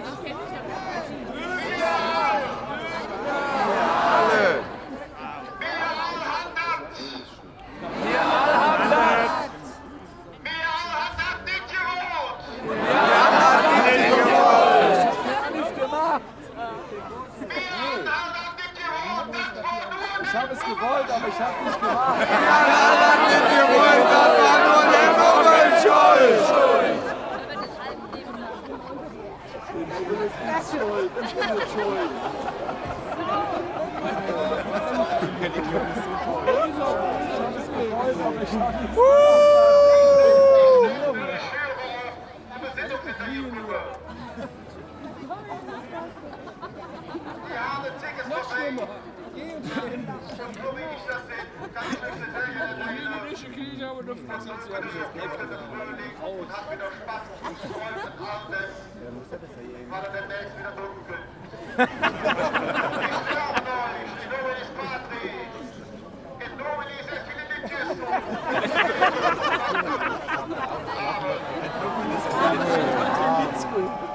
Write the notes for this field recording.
25.02.2009 0:15 mitternächtliche rituelle öffentliche verbrennung des nubbels in der bismarckstr., damit endet der karneval / ritual public burning of the nubbel at midnight, end of carnival.